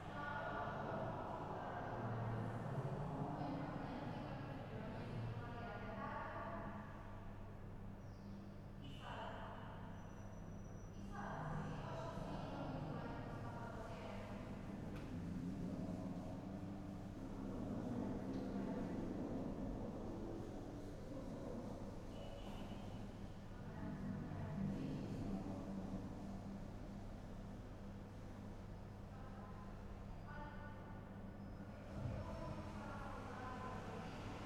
porto, maus habitos - garage 3rd floor
garage 3rd floor haus habitos, sounds and echos from the futureplaces festival
14 October, 18:00